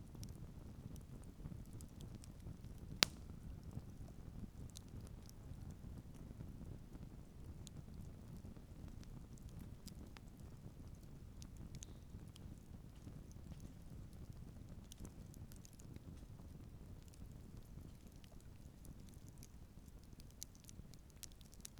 Lithuania, Stabulankiai, fireplace
little fireplace at ancient heathen site
12 October 2013, 14:40